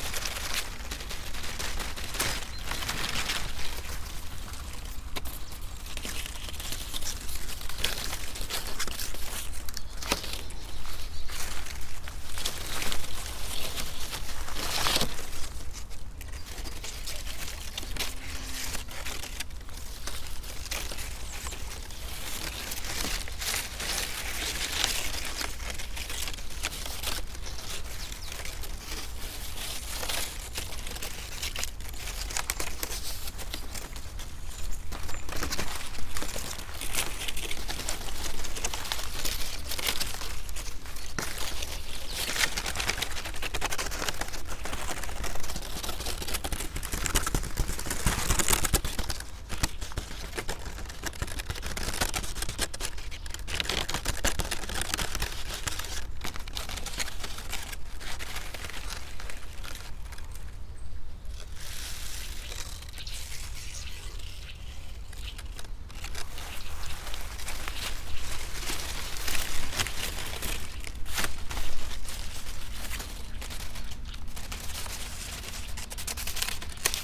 corn, Šturmovci, Slovenia - leftovers
playing with few dried corn stalks